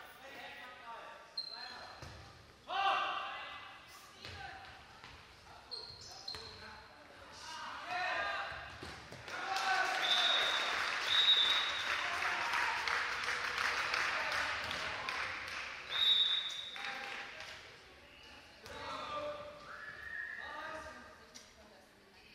ratingen west, sportzentrum, hallenhandball
project: social ambiences/ listen to the people - in & outdoor nearfield recordings